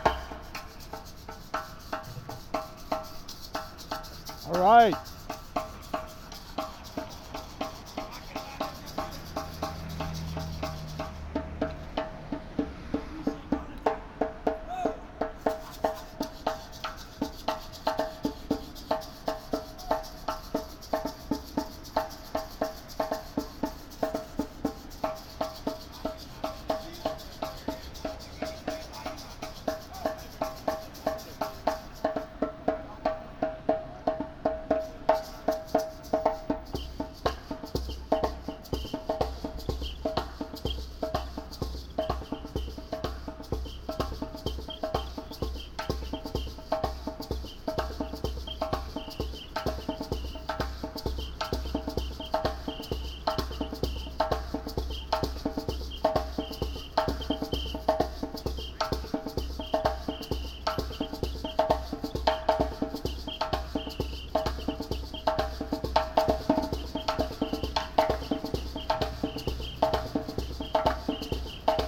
Wholesale District, Indianapolis, IN, USA - Indy Street Percussionist
Binaural recording of street performer playing percussion in downtown Indianapolis. April 29, 2015
Sony PCM-M10, MM BSM-8, Audacity (normalized and fades)